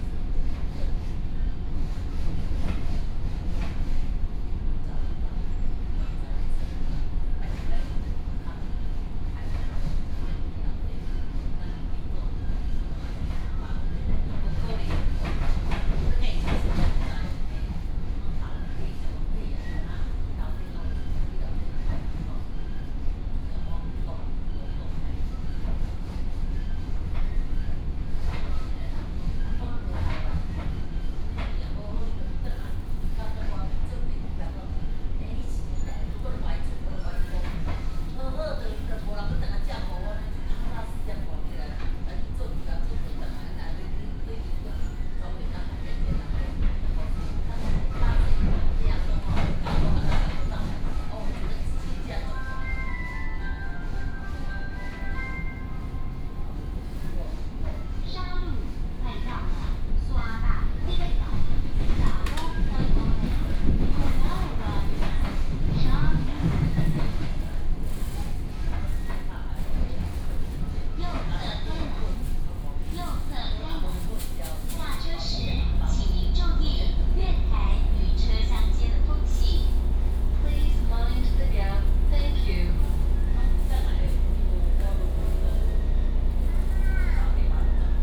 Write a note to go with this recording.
Coastal Line (TRA), from Longjing Station station to Shalu Station